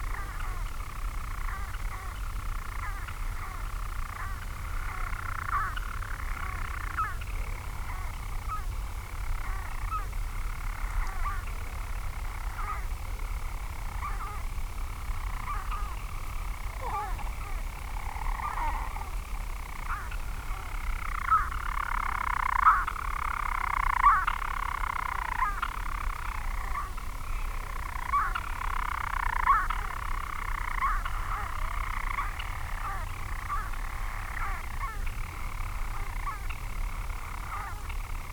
Marloes and St. Brides, UK - european storm petrel ...
Skokholm Island Bird Observatory ... storm petrel singing ..? birds nest in chambers in the dry stone walls ... they move up and down the spaces ... they also rotate while singing ... lots of thoughts that two males were singing in adjacent spaces ... open lavalier mics clipped to sandwich box ... on a bag close to wall ...